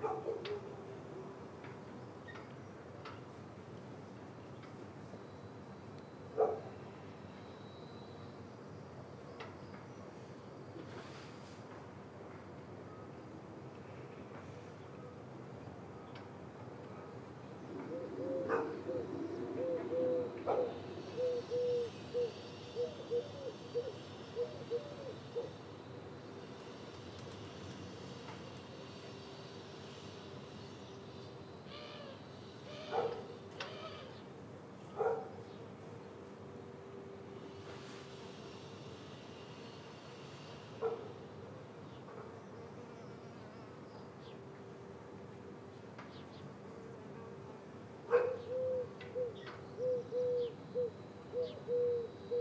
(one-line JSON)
{"title": "Chemin des Sablons, La Rochelle, France - semi distant bell tower and resumption of construction site this morning", "date": "2020-04-16 11:38:00", "description": "semi distant bell tower and resumption of construction site this morning\nORTF DPA4022 + Rycote + Mix 2000 AETA + edirol R4Pro", "latitude": "46.17", "longitude": "-1.21", "altitude": "10", "timezone": "Europe/Paris"}